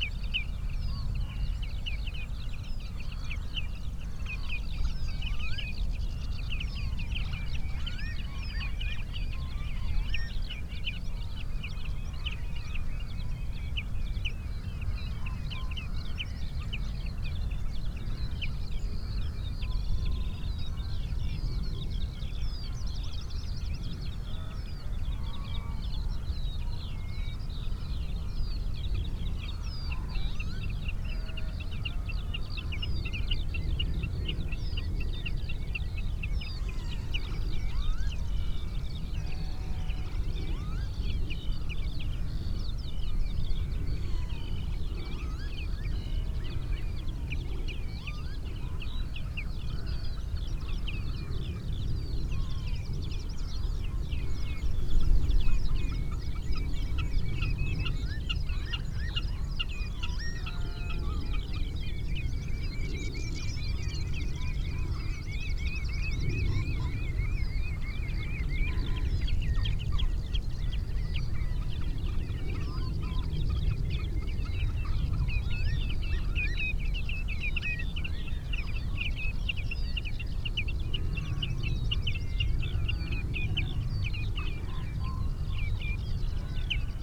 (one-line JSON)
{"title": "Isle of Islay, UK - five bar gate soundscape ...", "date": "2018-05-25 06:45:00", "description": "five bar gate soundscape ... rspb loch gruinart ... sass lodged in the bars of a gate ... bird calls and song from ... snipe ... redshank ... lapwing ... greylag ... sedge warbler ... skylark ... jackdaw ... pheasant ... background noise ...", "latitude": "55.82", "longitude": "-6.34", "altitude": "1", "timezone": "Europe/London"}